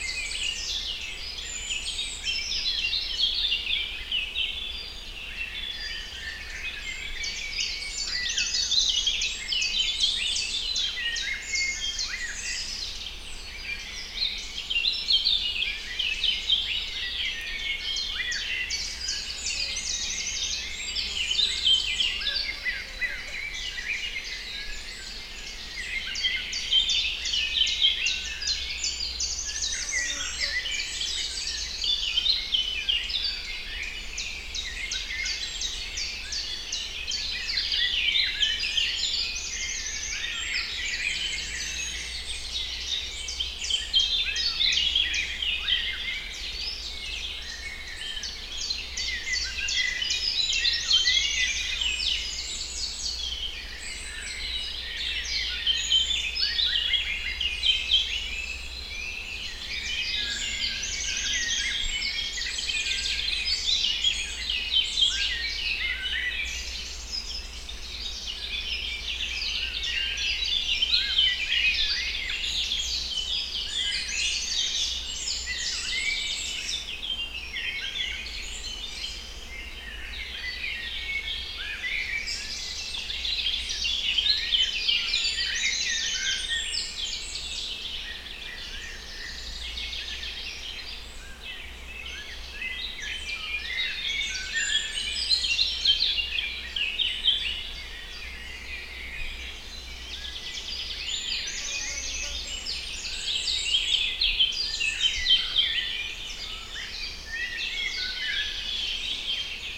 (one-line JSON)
{"title": "Grgar, Grgar, Slovenia - Grgar Slatna", "date": "2020-06-20 09:05:00", "description": "Birds in forest. Recorded with Sounddevices MixPre3 II and LOM Uši Pro.", "latitude": "46.01", "longitude": "13.66", "altitude": "357", "timezone": "Europe/Ljubljana"}